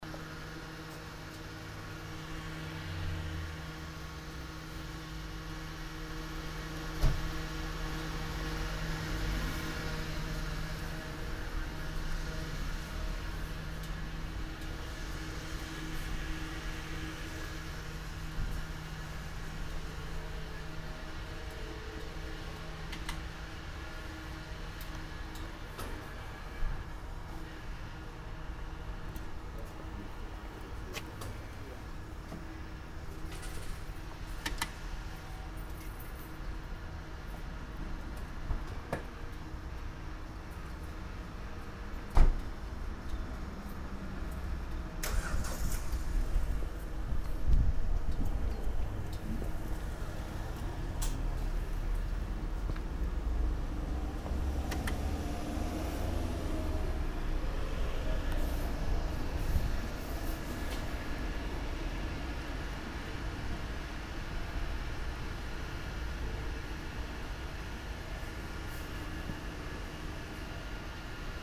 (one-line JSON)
{"title": "unna, ostring, gas station", "description": "at the 24 hour gas station, filling in gasoline, payment inside the shop\nsoundmap nrw - social ambiences and topographic field recordings", "latitude": "51.53", "longitude": "7.69", "altitude": "107", "timezone": "Europe/Berlin"}